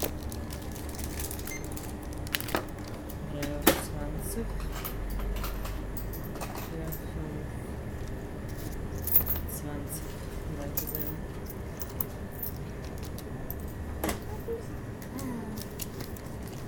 soundmap nrw/ sound in public spaces - in & outdoor nearfield recordings